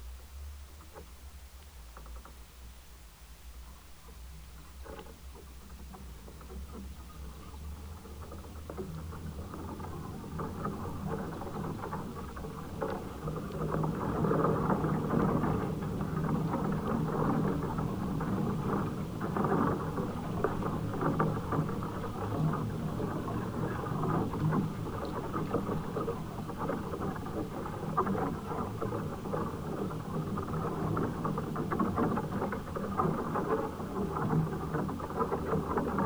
shedded poplars X gusts
...leafless poplars in 3-4ms gusts...